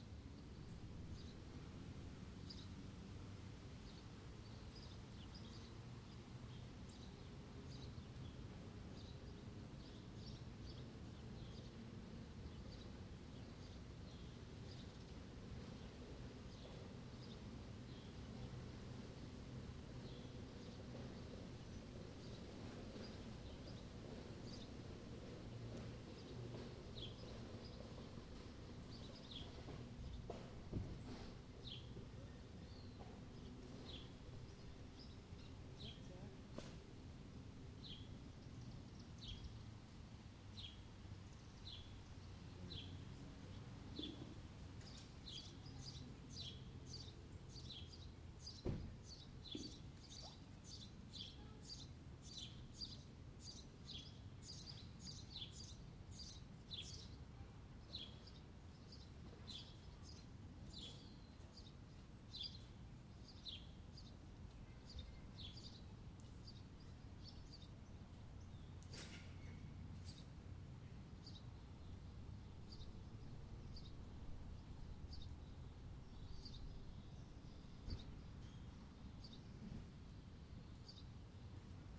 Casterton Ave. Highland Square, Akron, OH, USA - Casterton Ave
Recording on Casterton Ave in Highland Square, Akron OH using Zoom Q3HD Handy Video recorder on a Flip mini tripod set on the ground in front of a residential home.